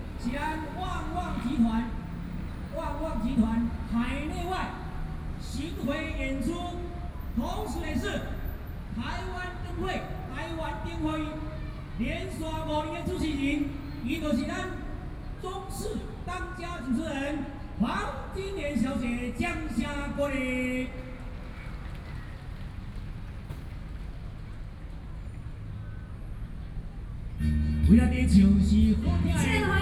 Zhongshan Park, 宜蘭市神農里 - Walking around in the park
Walking around in the park, Traffic Sound
Sony PCM D50+ Soundman OKM II